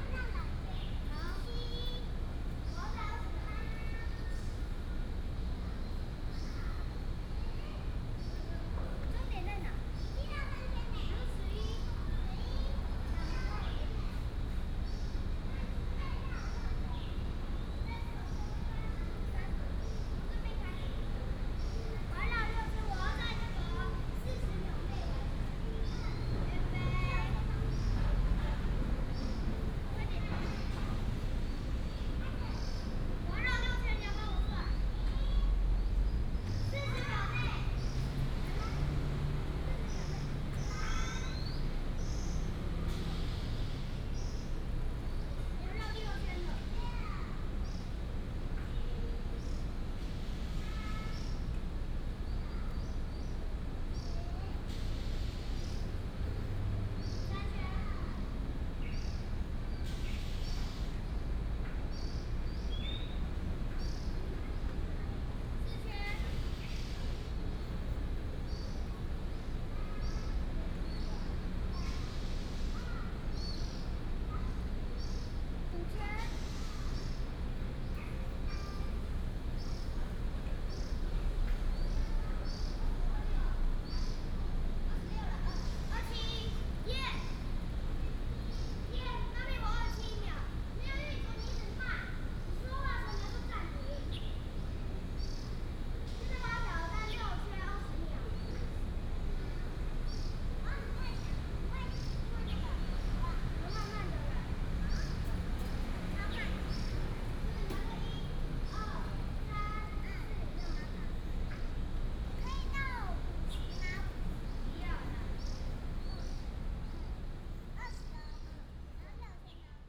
龍生公園, Da'an District - Birds and the Park
In the park, children, Bird calls, Very hot weather